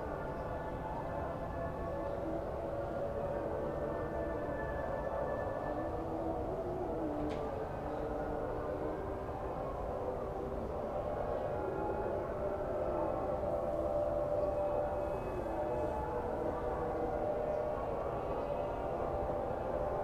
Hotel Jardins de la Koutoubia, Marrakesh, Marroko - early morning prayers
chants from very distant prayers early in the morning, recorded with Sony PCM-D100 with built-in microphones
Morocco, 25 November 2018, 05:28